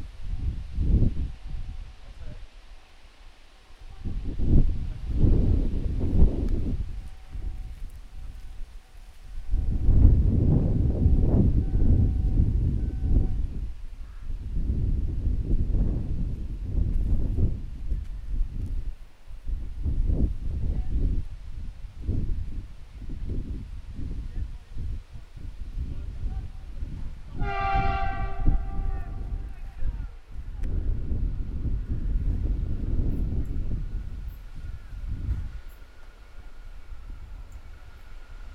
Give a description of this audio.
SU42 train after modernization passing by old railway station. The recording comes from a sound walk around the Zawarcie district. Sound captured with ZOOM H1.